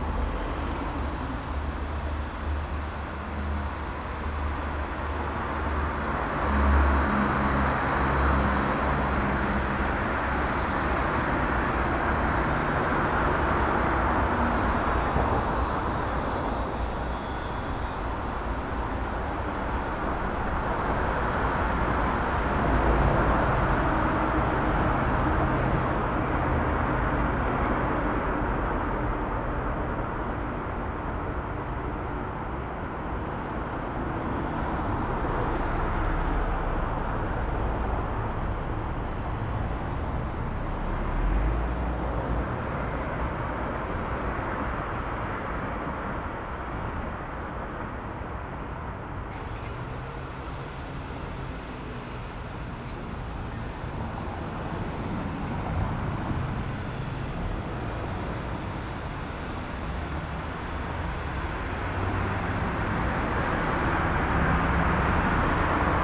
berlin: bundesallee - the city, the country & me: above the tunnel entrance
the city, the country & me: november 4, 2011
Berlin, Germany, November 2011